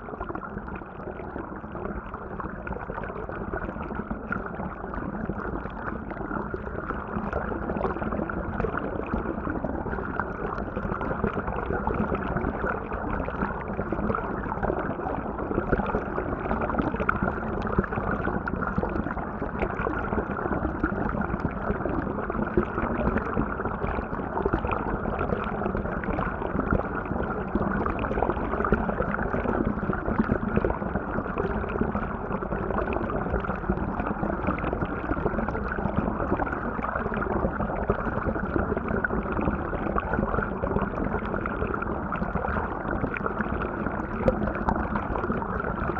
Strawberry Park Natural Hot Springs, CO, USA - Strawberry Hot Springs Hydrophone 2
Recorded with a pair of JrF hydrophones into a Marantz PMD661